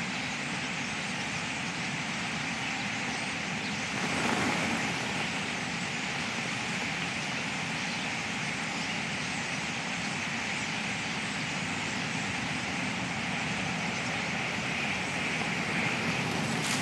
An estimated 50,000 birds roosted in the reed beds during the winter months, and large numbers still do. I put the recorder in a Hawthorn bush and retreated to some cover to watch the birds coming in to roost in the reed beds. Greylag geese flew over at various points and Mallard and other wildfowl can be heard, along with a couple af light aircraft of course....Sony M10 with built in mics.